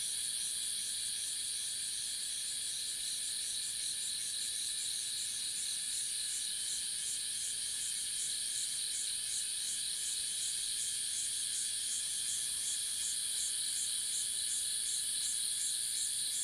油茶園, 魚池鄉五城村 - Underbrush
Insect sounds, Underbrush, Cicada sounds
Zoom H2n MS+XY